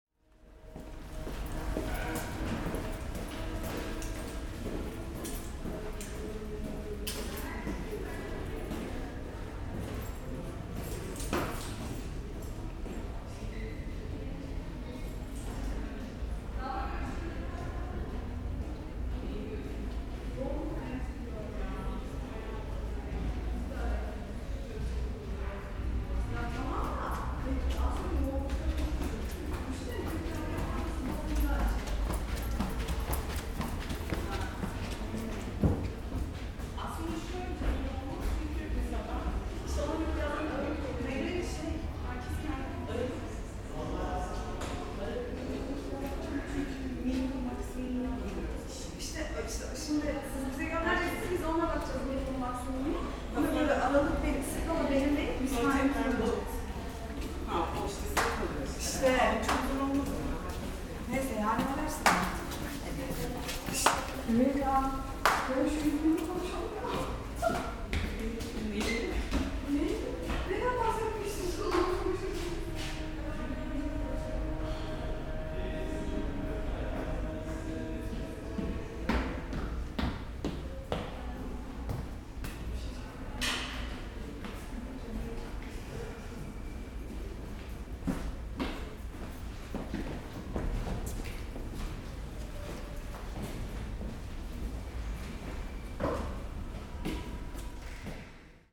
ITÜ Architechture bldg survey, Corridor 3
sonic survey of 18 spaces in the Istanbul Technical University Architecture Faculty